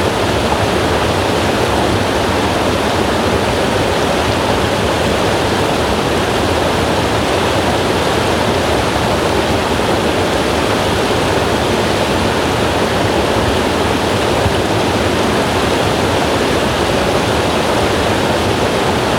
Route du Châtelard, Liddes, Suisse - Torrents DAron in winter
A little river in Wallis (Swiss) under the ice and snow. A cold day -10c (14F). Record with a Zoom and rework at home.